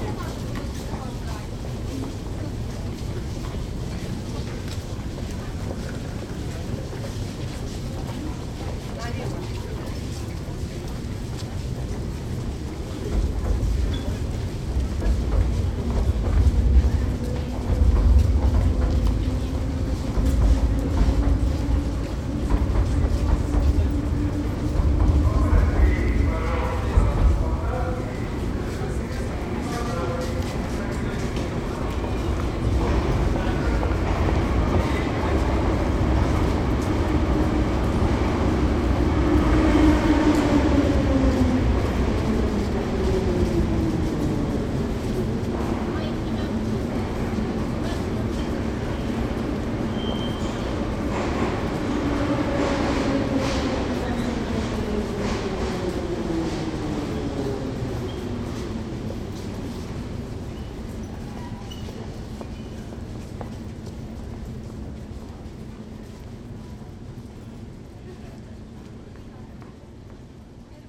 {"title": "St. Petersburg, Russia - metro station Nevsky prospect", "date": "2014-12-24 19:00:00", "description": "I was sitting at the station, and waited for the meeting.\nI'm a little late, and the meeting could not be, so I decided to entertain myself this record on the recorder Zoom H2.", "latitude": "59.93", "longitude": "30.33", "altitude": "15", "timezone": "Europe/Moscow"}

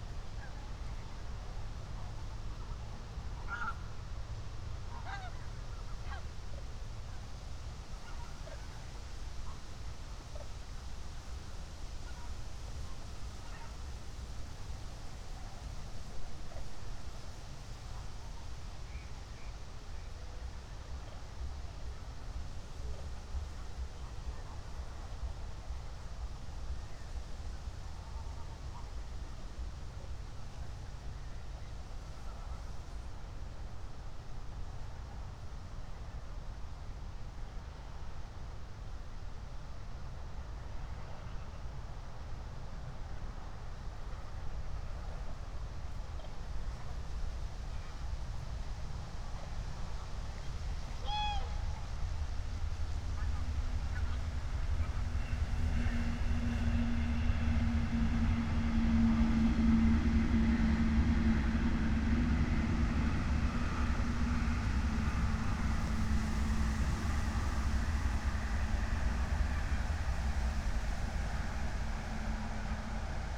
{"date": "2021-11-06 21:04:00", "description": "21:04 Berlin, Buch, Moorlinse - pond, wetland ambience", "latitude": "52.63", "longitude": "13.49", "altitude": "51", "timezone": "Europe/Berlin"}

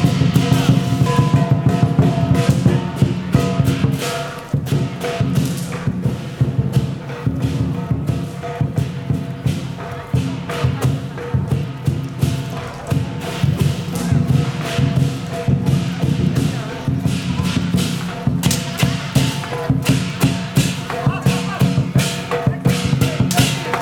{"title": "Mott St, New York, NY, USA - Chinese drums and snaps fireworks, Chinatown NY", "date": "2018-02-16 16:30:00", "description": "Lunar New Year Festivities in Chinatown, NY.\nSounds of drums and snaps fireworks\nMott Street, Chinatown.\nZoom H6", "latitude": "40.72", "longitude": "-74.00", "altitude": "8", "timezone": "America/New_York"}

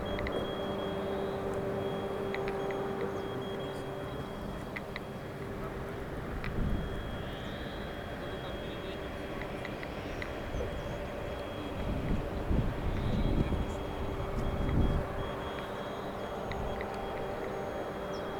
{"title": "Chernobyl nuclear plant, Ukraine - Monster rumor", "date": "2008-05-16 12:30:00", "description": "The rumor just in front of Lénine reactor.\nzoom h4, shoeps RTF", "latitude": "51.39", "longitude": "30.10", "altitude": "122", "timezone": "Europe/Kiev"}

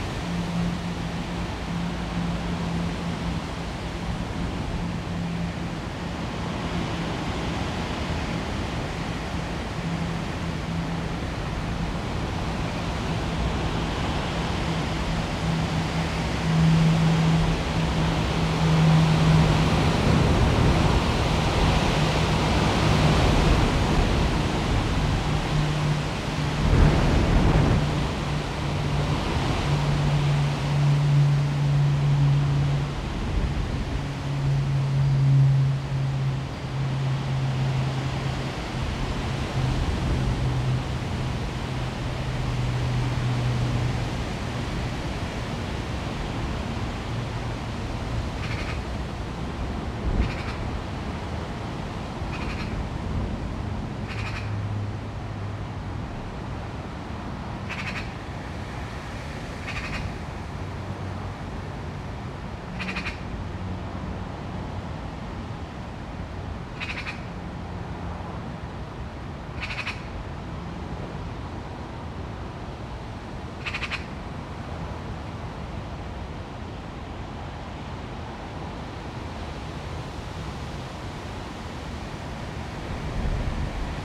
{"title": "London Borough of Hackney, Greater London, UK - Storm 'St Jude', sycamores, gusts and a magpie", "date": "2013-10-28 08:15:00", "description": "The biggest storm in London for years was named 'St Jude' - the patron saint of lost causes. This was recorded from my back window. Most of the sound is wind blowing through two high sycamore trees - some intense gusts followed by a minute or three of relative quiet was the pattern. Planes were still flying over into Heathrow and magpies seemed untroubled.", "latitude": "51.56", "longitude": "-0.07", "altitude": "24", "timezone": "Europe/London"}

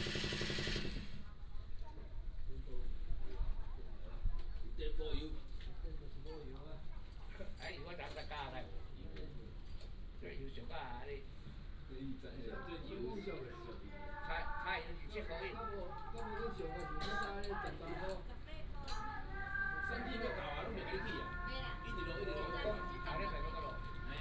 {"title": "通梁村, Baisha Township - Small fishing village", "date": "2014-10-22 16:27:00", "description": "Small fishing village", "latitude": "23.66", "longitude": "119.56", "altitude": "5", "timezone": "Asia/Taipei"}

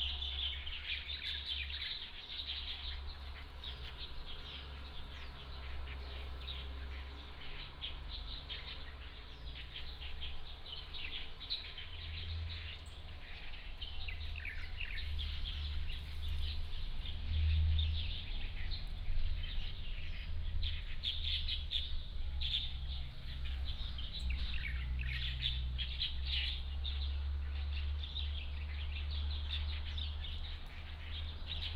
{"title": "馬祖村, Nangan Township - Birds singing", "date": "2014-10-15 08:58:00", "description": "Birds singing, Small village, Next to the church", "latitude": "26.16", "longitude": "119.92", "altitude": "29", "timezone": "Asia/Taipei"}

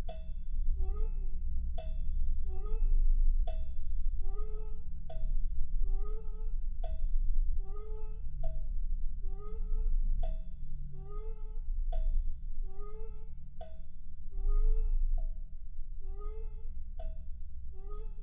{"title": "Klaipėda, Lithuania, a bell monument", "date": "2018-05-28 15:30:00", "description": "contact microphones on some kind of monument built with real bell. the bell is actually is not working, but contact microphones can capture subtle sound", "latitude": "55.71", "longitude": "21.13", "altitude": "2", "timezone": "Europe/Vilnius"}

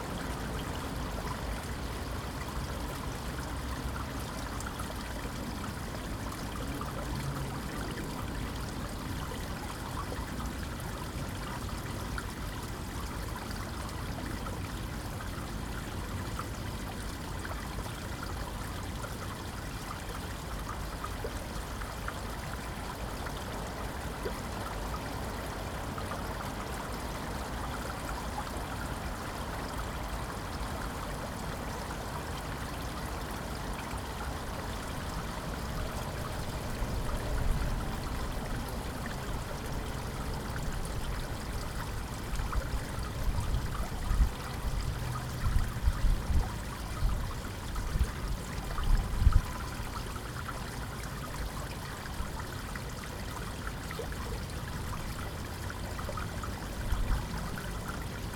{"title": "Canada Memorial, Green Park, London. - Canada Memorial, Green Park", "date": "2017-07-11 07:10:00", "description": "The Canada Memorial remembers the one million Canadians who served with British forces during the two World Wars. It faces in the direction of the Canadian port of Halifax in Nova Scotia, from where many Canadian service personnel sailed for Europe.\nRecorded on a Zoom H2n. There is a little wind noise as I forgot to take the wind shield with me!", "latitude": "51.50", "longitude": "-0.14", "altitude": "12", "timezone": "Europe/London"}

